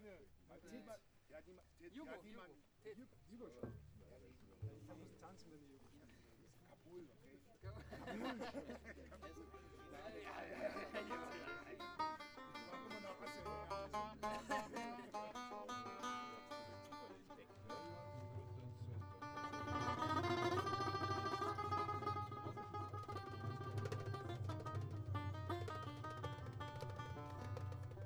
A fond tribute to 4 musicians (Tuna Trio and the Ghost) who were having a last rehearsal before a concert on the platform of a swimmingpool. Together with other chance visitors we were attracted by the sound of music in the pitch dark. The singer (Neil from London) with a banjo was amplified trough a megaphone, a contrabass from sibiria, a cajón player and a saxophone player from saxony. Recorded with a handheld Zoom H2.
Bayern, Deutschland, 29 July 2011